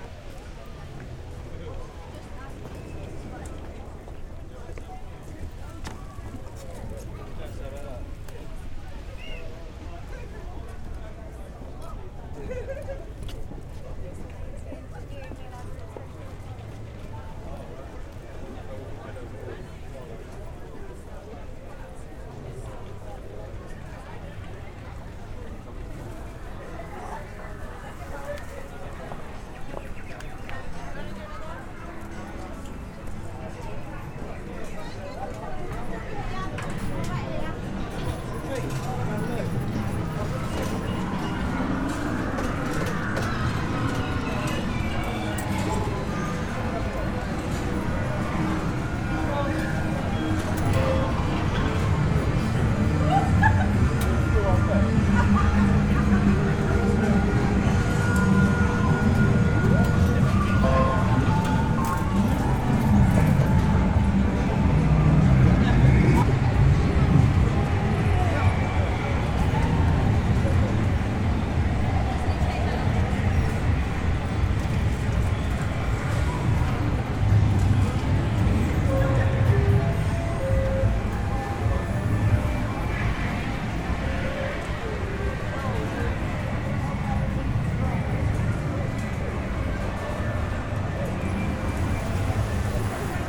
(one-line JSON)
{"title": "Brighton Pier 2008", "date": "2008-04-26 15:00:00", "description": "A walk along Brighton Pier April 26th 2008 3pm.", "latitude": "50.82", "longitude": "-0.14", "timezone": "Europe/London"}